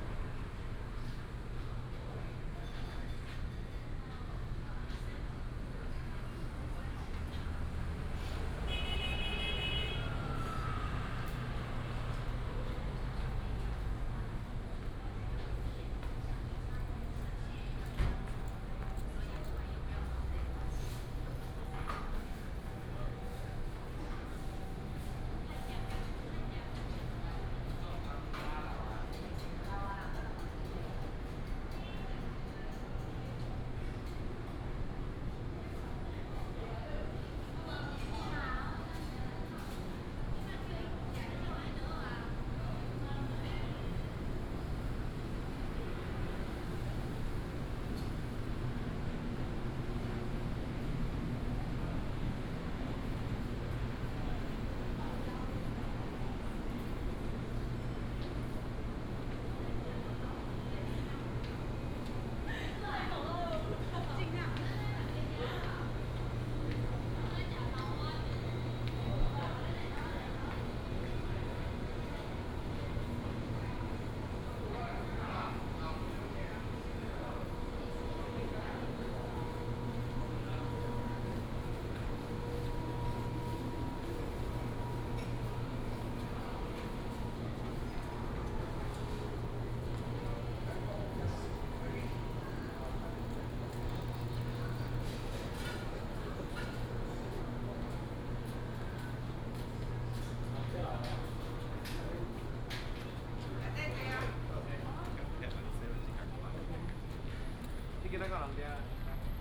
雲林溪美食廣場, Douliu City - food court
food court
Binaural recordings
Sony PCM D100+ Soundman OKM II